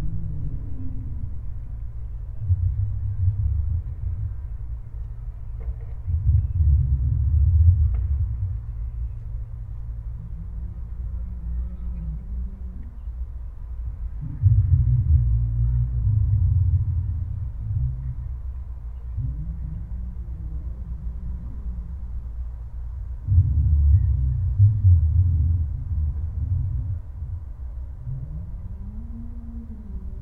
Kaunas, Lithuania, installed sound
sound installation "sleeping beast of Kaunas town". small omni mics in the hole in the wall
Kauno apskritis, Lietuva, 17 August 2021, 17:30